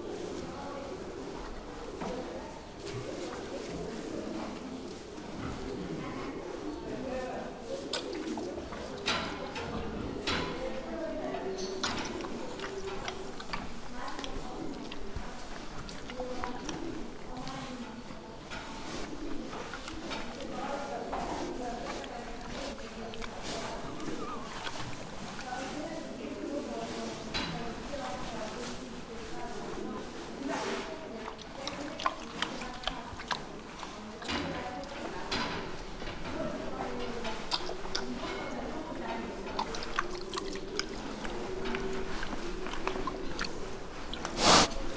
hupperdange, farm yard, pig shed
Inside a pig shed on a local farm yard. A pig peeing, a water bucket, pig mouth sounds and some talking visitors.
Hupperdange, Bauernhof, Schweinestall
In einem Schweinestall auf einem regionalen Bauernhof. Ein Schwein lässt Wasser, ein Wasserkübel, Geräusche vom Schweinemaul und einige sprechende Besucher. Aufgenommen von Pierre Obertin während eines Stadtfestes im Juni 2011.
Hupperdange, ferme, porcherie
Dans la porcherie d’une ferme de la région. Un cochon urine, un seau d’eau, le bruit de la gueule du cochon et la discussion de quelques visiteurs.
Enregistré par Pierre Obertin en mai 2011 au cours d’une fête en ville en juin 2011.
Project - Klangraum Our - topographic field recordings, sound objects and social ambiences